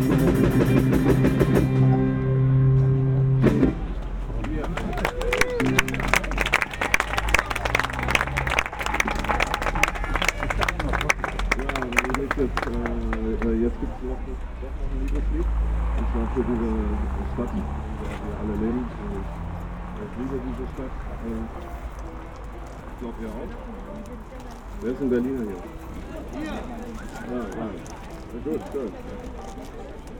berlin: hobrechtbrücke - the city, the country & me: country & reggae band
country & reggae band during fête de la musique (day of music)
the city, the country & me: june 21, 2012
21 June 2012, 22:19